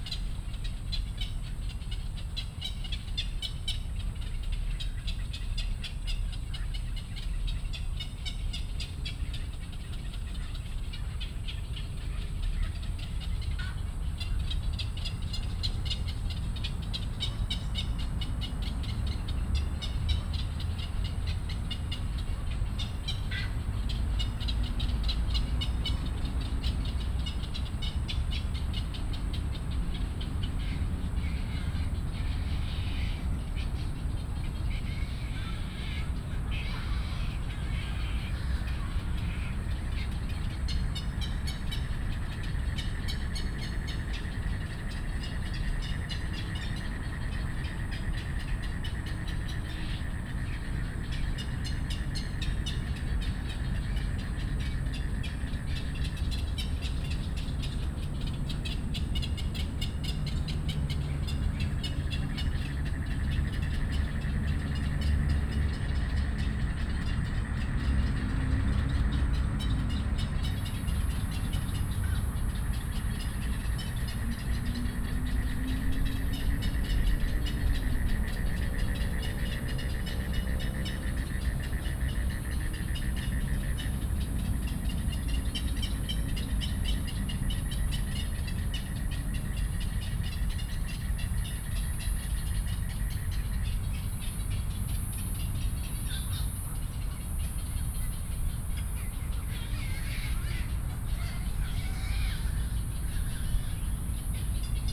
Bird calls, Frogs chirping, in the park
Da’an District, Taipei City, Taiwan, 28 June